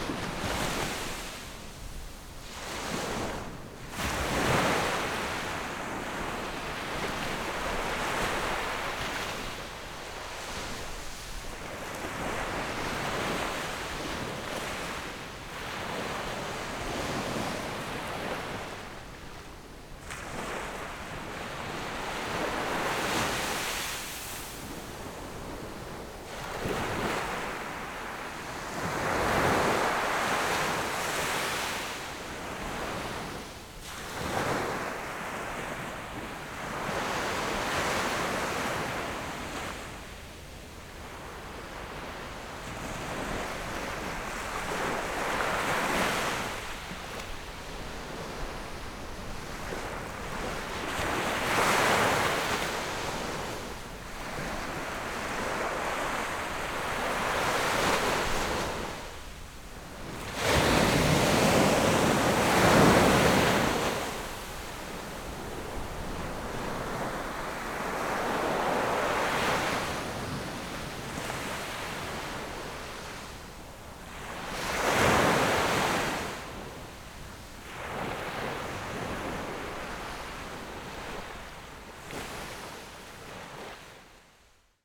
山水沙灘, Magong City - At the beach
At the beach, Sound of the waves
Zoom H6 Rode NT4